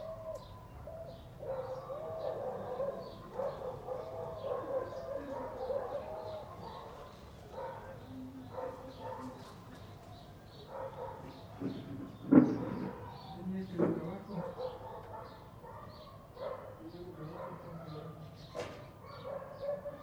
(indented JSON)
{
  "title": "Calle, Mercedes, Buenos Aires, Argentina - Casa",
  "date": "2018-06-16 15:00:00",
  "description": "En el patio de la casa donde viví mi infancia.",
  "latitude": "-34.64",
  "longitude": "-59.43",
  "altitude": "38",
  "timezone": "America/Argentina/Buenos_Aires"
}